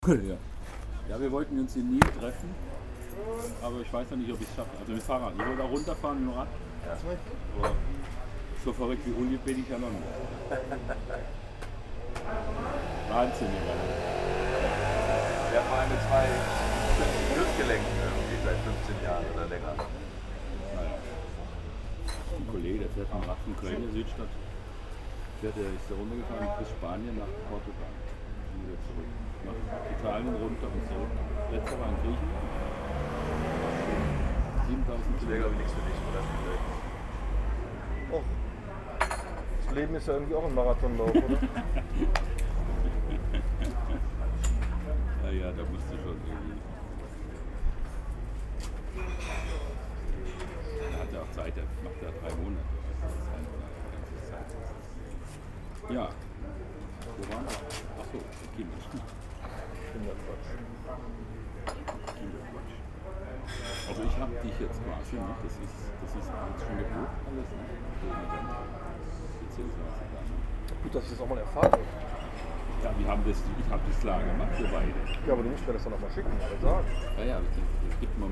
neighbourhood café, south cologne, may 30, 2008. - project: "hasenbrot - a private sound diary"